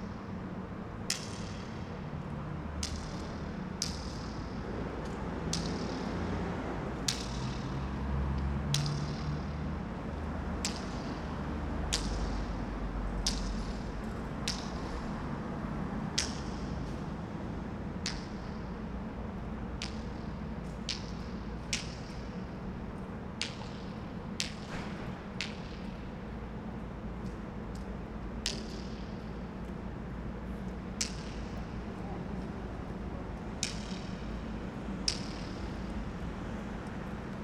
echoes under Krieau U-Bahn station, Vienna
testing the reverberation of the concrete space under the Krieau U-Bahn station